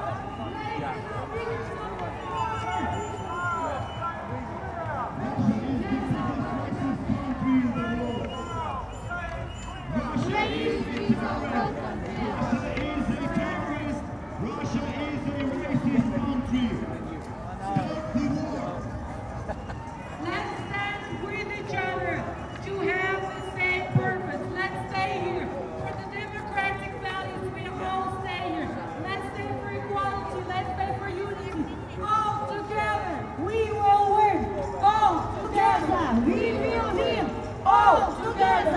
Downing St, London, UK - March Against Racism meets Anti-War Demonstration